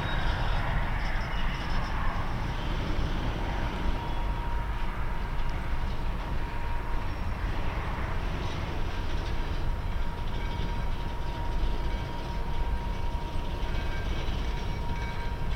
Ostrava-Mariánské Hory a Hulváky, Česká republika - Oni si hrajou
On the cargo station with a friend, but alone in a mysterious place.
Ostrava-Mariánské Hory a Hulváky, Czech Republic, 8 November 2013